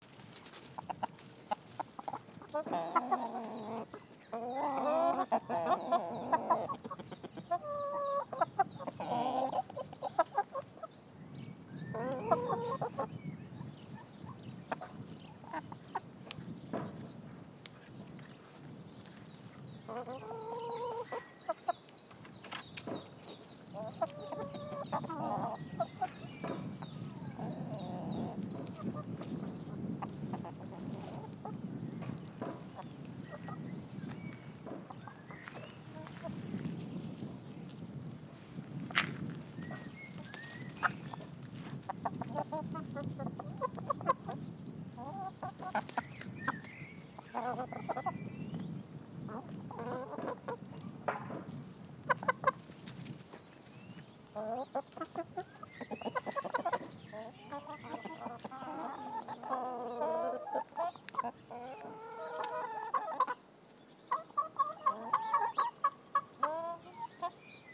Sonniger Ostersonntag, Hühner gackern zufrieden, im Hintergrund ein Pony, das polternde Geräusche verursacht.
Sony D100 mit UsiPro-Mikros.
Niedersachsen, Deutschland, 17 April 2022, 17:00